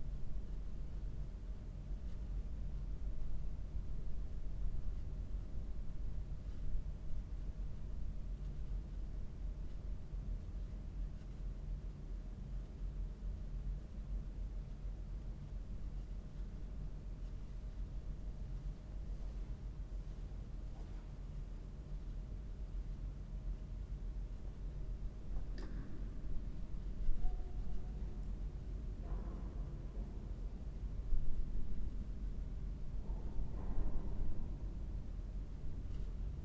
Auroville, Matrimandir, Inner chamber
world listening day, Auroville, India, Matrimandir, silence, meditation, inner chamber
Auroville, Pondicherry, India, 18 July